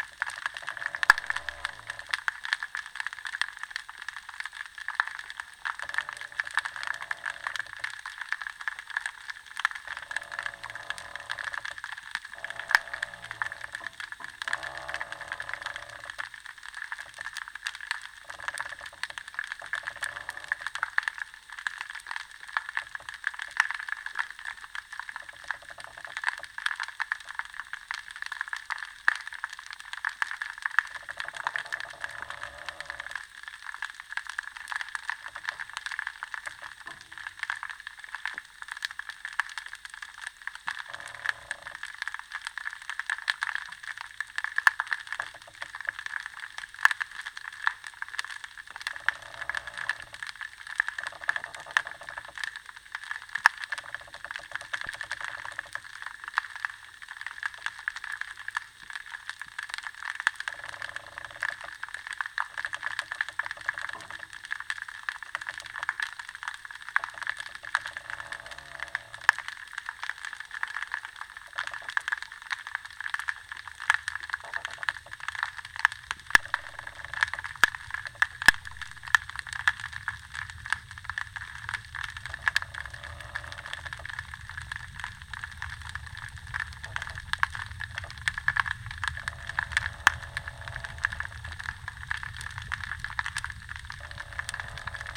Wonderful aquatic sounds in this bay. You can hear the creaking of the ferry gently moving by the wharf, and occasionally thunder of the trains on the tracks which is just behind the bay. There is a hissing sound, more so in the right hydrophone, which is not coming from the mics (I had them at equal gain and also the hydrophone hiss doesn't sound like this), I'm not sure what it is.
Cronulla NSW, Australia, 24 September 2014, 19:30